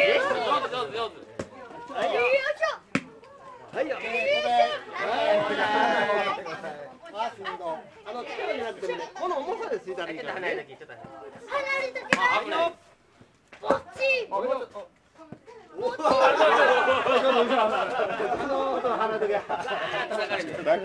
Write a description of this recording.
Neighborhood adults and children are making mochi (Japanese rice cake), taking turns pounding the rice. Adults help and encourage children to keep this winter tradition alive in rural Japan.